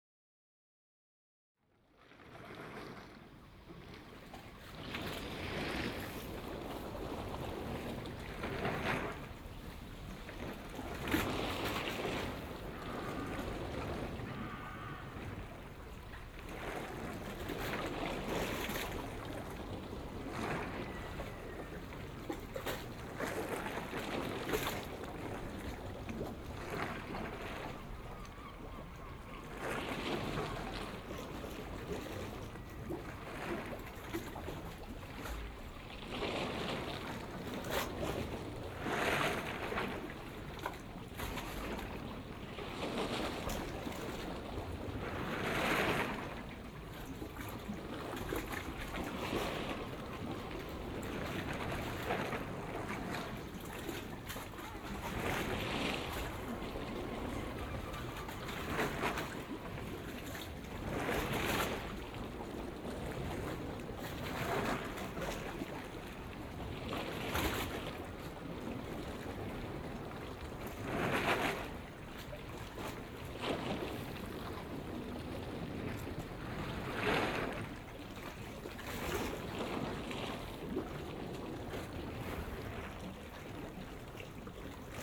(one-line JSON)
{"title": "Ustka, Polska - in the port Ustka - binaural", "date": "2015-03-15 13:37:00", "description": "moored yachts and smaller vessels in the port Ustka. Binaural records, please listen on headphones", "latitude": "54.58", "longitude": "16.86", "timezone": "Europe/Warsaw"}